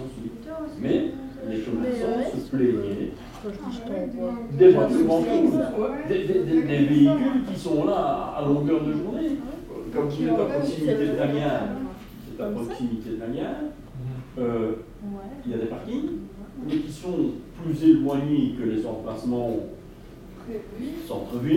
Seraing, Belgique - Police school
A time between two courses, policemen are talking about their job.
Seraing, Belgium, 24 November 2015, 12:30